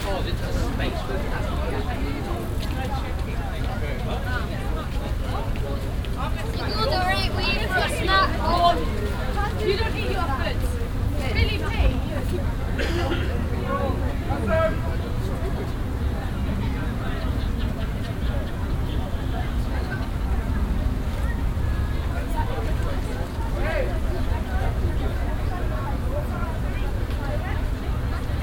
soundmap: cologne/ nrw
altstadt, rheinufer, internationale touristen und schulklassen an der anlegestelle der K/D Schiffahrtslinie
project: social ambiences/ listen to the people - in & outdoor nearfield recording
altstadt, rheinufer, schiffsanlegestelle, 25 May 2008, 15:29